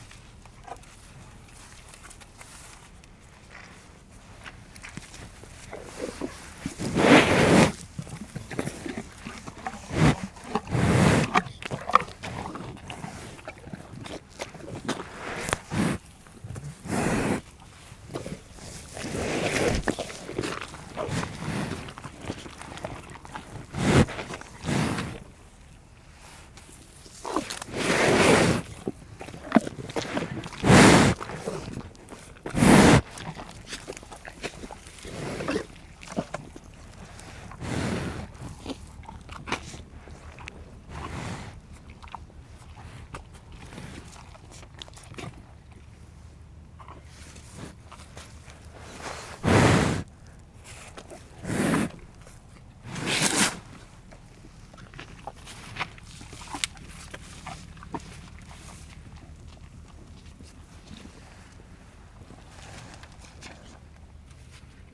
Yville-sur-Seine, France - Cow eating
A cow is eating apples we give. Gradually this cow is becoming completely crazy, as it likes apples VERY much. When we went back to the travel along the Seine river, this poor cow was crying loudly !
17 September